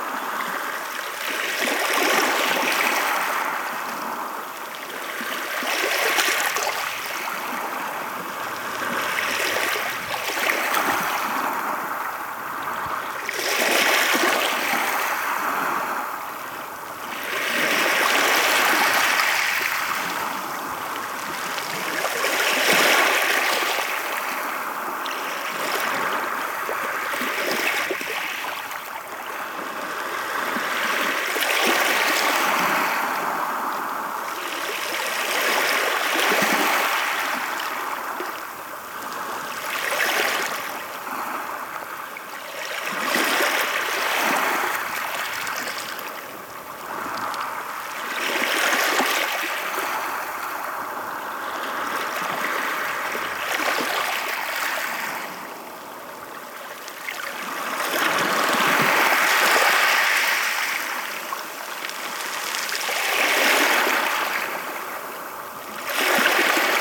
Cape Kujskij-sea pebbles, White Sea, Russia - Cape Kujskij-sea pebbles
Cape Kujskij-sea pebbles.
Мыс Куйский, шум моря, мелкая галька.